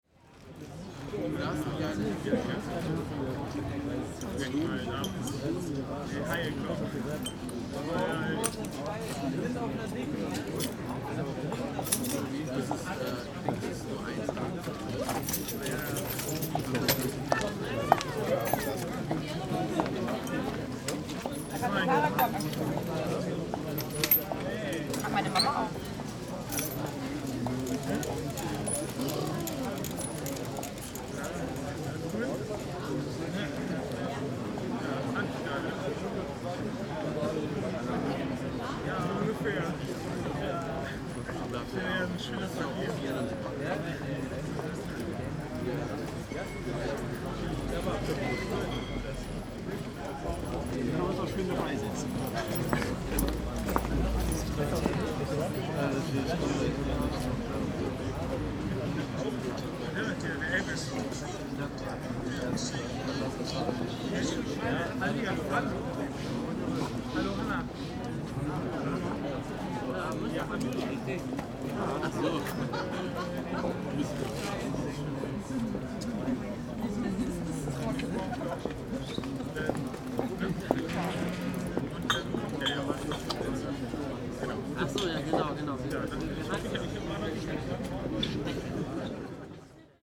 {
  "title": "brüsseler platz - people at night, brüsseler platz",
  "date": "2009-04-23 22:30:00",
  "description": "23.04.2009 22:30 since a while, people like to chill here at night during spring and summer, drinking beer & talking, and neighbours complain.",
  "latitude": "50.94",
  "longitude": "6.93",
  "altitude": "60",
  "timezone": "Europe/Berlin"
}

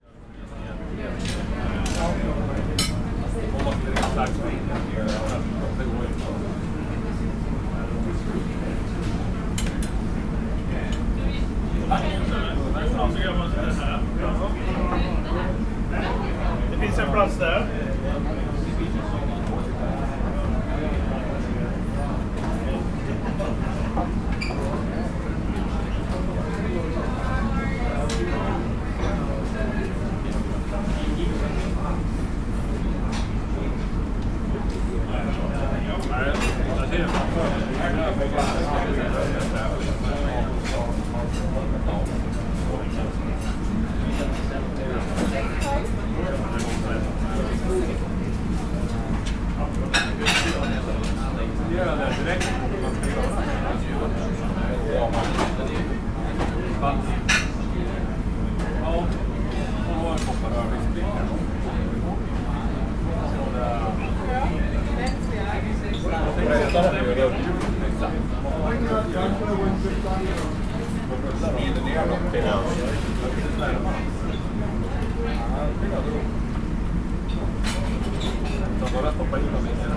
{
  "title": "Tarbert, UK - Onboard a ferry",
  "date": "2022-05-03 17:30:00",
  "description": "Sounds of the restaurant onboard of a Caledonian MacBrayne ferry to the Isle of Islay.\nRecorded with a Sound Devices MixPre-6 mkII and a pair of stereo LOM Uši Pro.",
  "latitude": "55.78",
  "longitude": "-5.56",
  "timezone": "Europe/London"
}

